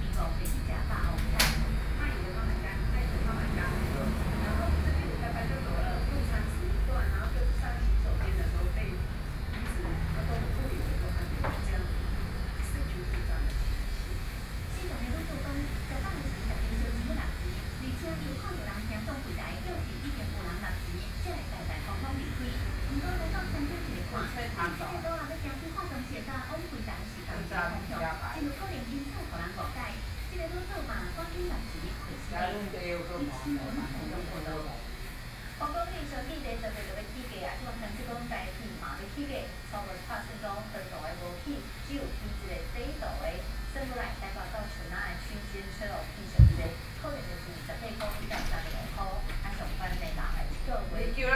Beitou - In the barber shop
In the barber shop, Sony PCM D50 + Soundman OKM II
Beitou District, Taipei City, Taiwan, 31 July